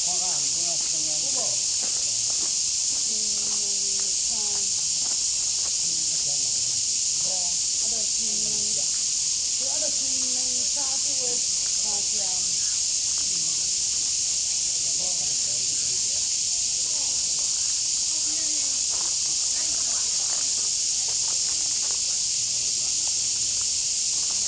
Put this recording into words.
at the park entrance, Cicadas cry, Footsteps, Pebbles on the ground, Zoom H2n MS+XY